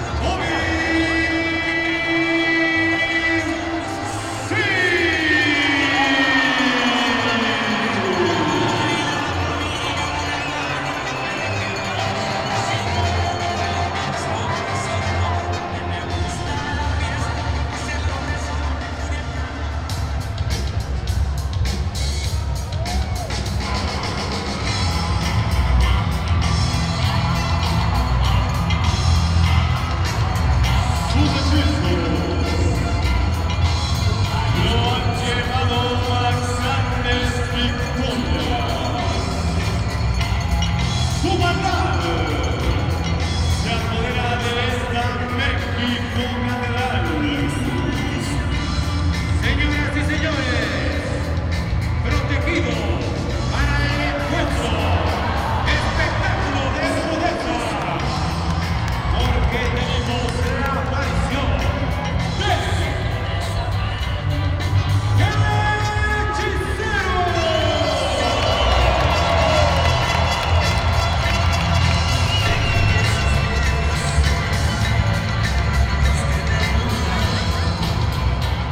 Dr. Lavista, Doctores, Cuauhtémoc, Ciudad de México, CDMX, Mexiko - Lucha Libre Arena Mexico
In Mexico City there are two official arenas where you can watch Lucha Libre. One is the huge Arena de México and the other is the Arena Coliseo.